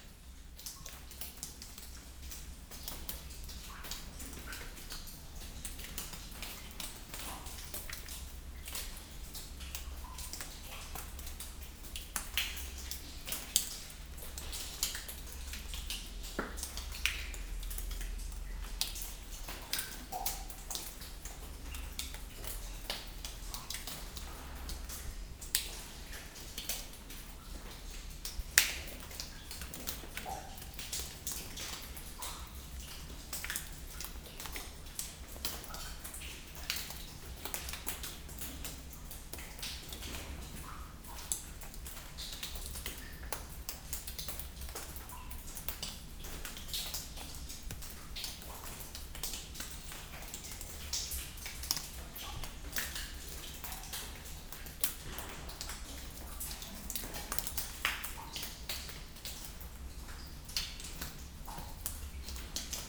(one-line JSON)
{"title": "Audun-le-Tiche, France - Audun shaft", "date": "2016-08-20 10:40:00", "description": "Sound of the water falling in the Audun-le-Tiche ventilation shaft, which is 80 meters deep.", "latitude": "49.46", "longitude": "5.96", "altitude": "338", "timezone": "Europe/Paris"}